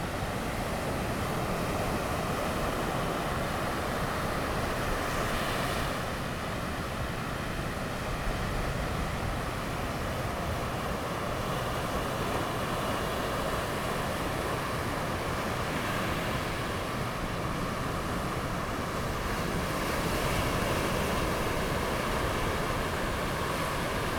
復興村, Zhuangwei Township, Yilan County - the waves
Sound of the waves, On the beach
Zoom H2n MS+XY
Zhuangwei Township, Yilan County, Taiwan, 18 November 2016, 14:04